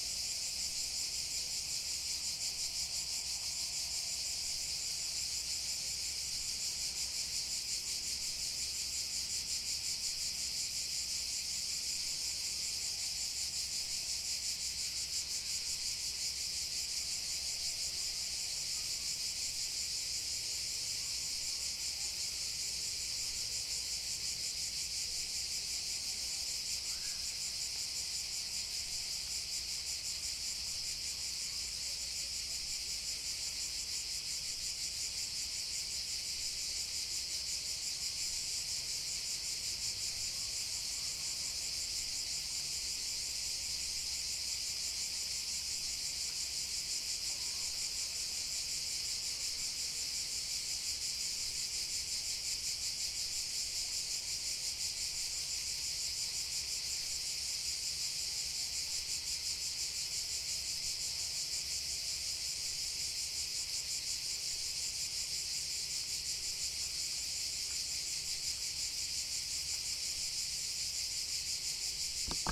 Jelsa, Hrvatska - Graveyard soundscape
Sounds of crickets, distant boat engines and people on the distatn beach. Sounds recordend on the graveyard with Zoom h4n.